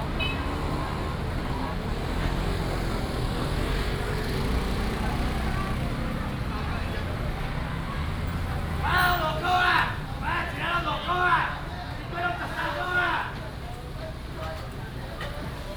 龜山區中和南路, Taoyuan City - traditional markets
Traditional market, vendors peddling, traffic sound